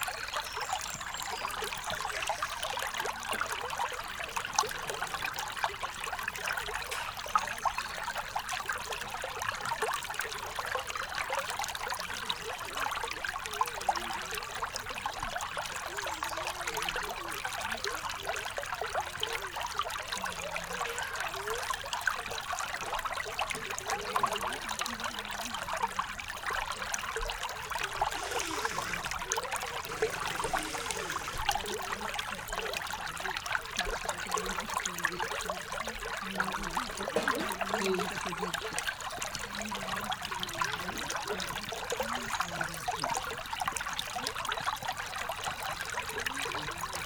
Walhain, Belgique - The river Orne
Recording of the river Orne, in a pastoral scenery. The Sart stream and the camping d'Alvaux ambience.
Recorded with Audioatalia microphones in front of the water.
10 April 2016, 16:30